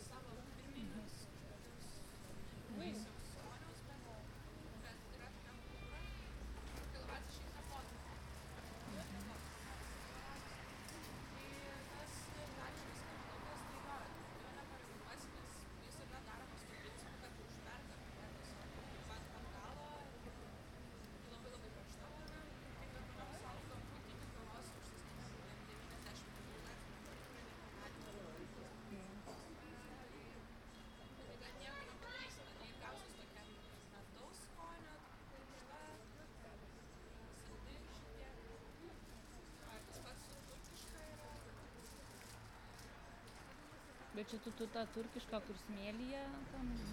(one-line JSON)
{"title": "Druskininkai, Lithuania, coffee shop", "date": "2022-09-08 12:15:00", "description": "Sitting, drinking coffee, talking", "latitude": "54.02", "longitude": "23.98", "altitude": "97", "timezone": "Europe/Vilnius"}